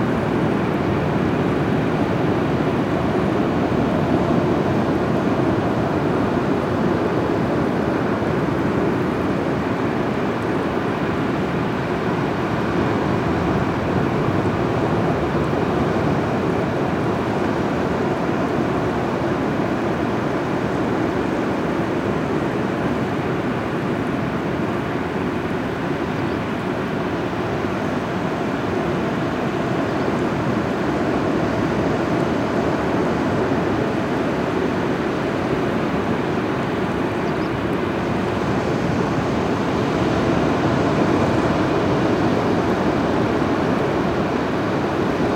Vauville, France - Waves at Vauville
Waves at Vauville… (Zoom H6)